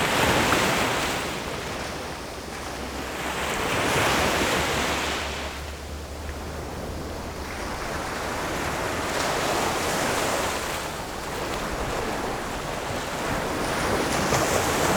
Shimen Dist., New Taipei City - The sound of the waves